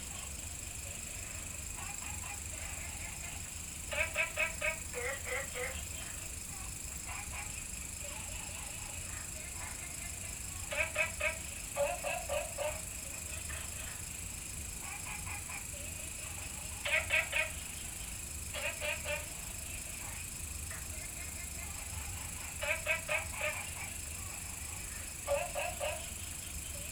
青蛙ㄚ 婆的家, Puli Township - Frog calls
Frog calls
Binaural recordings
Sony PCM D100+ Soundman OKM II
Puli Township, 桃米巷11-3號, 3 September 2015